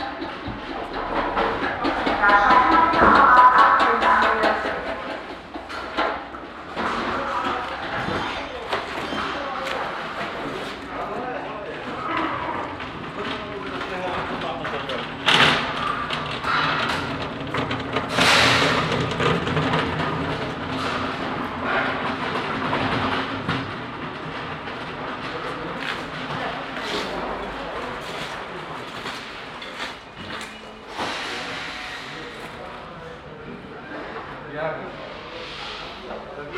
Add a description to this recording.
atmo im baumarkt, mittags im frühjahr 07, soundmap nrw: social ambiences, topographic fieldrecordings